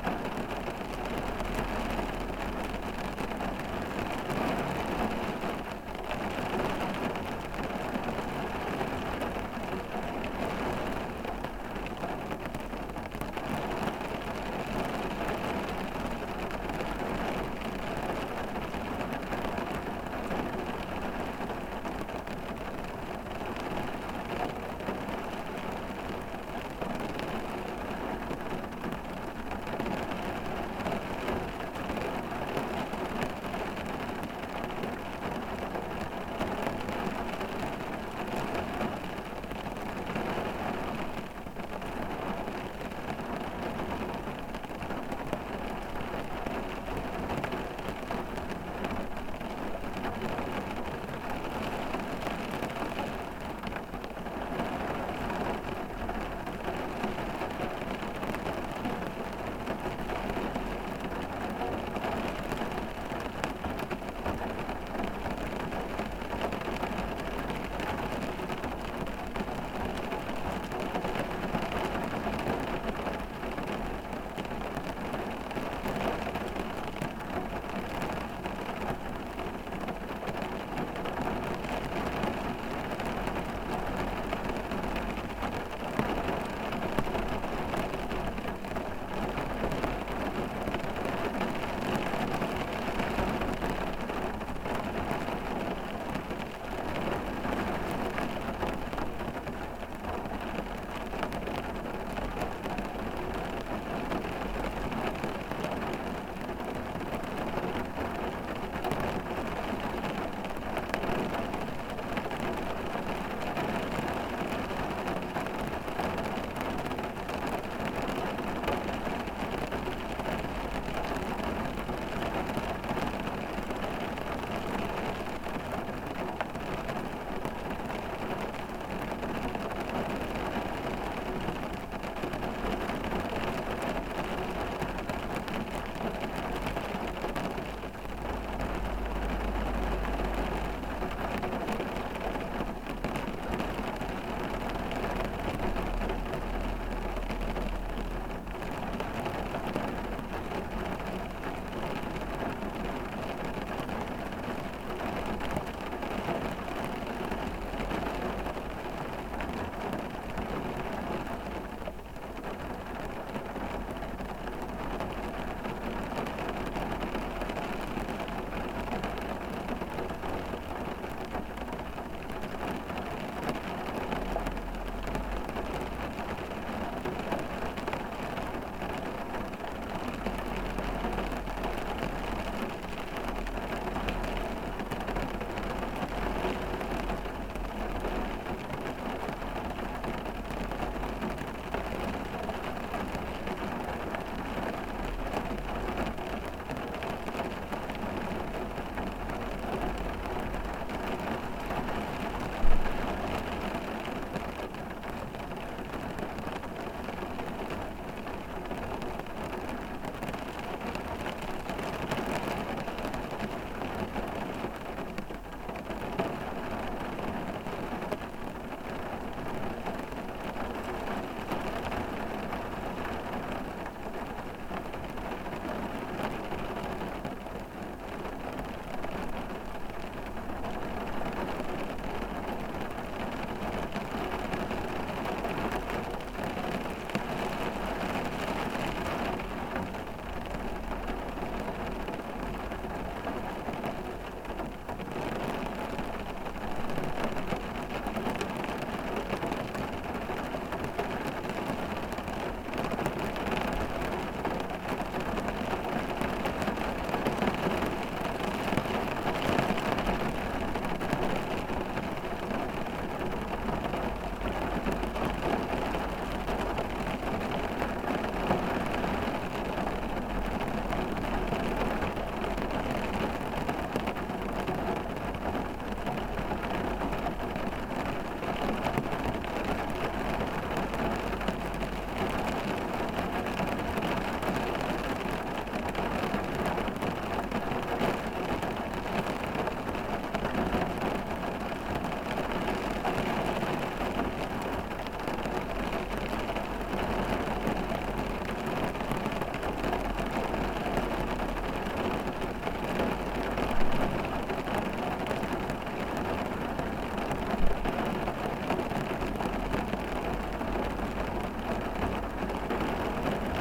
Was hoping to make loads of amazing recordings outside today but the British Summer was against me!